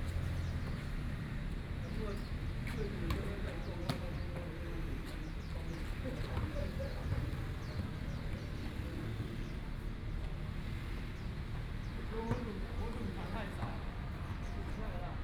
紫陽里, Neihu District - small park
In the corner of the small park, Playing basketball voice, Chat between elderly
Binaural recordings
Taipei City, Taiwan, 15 March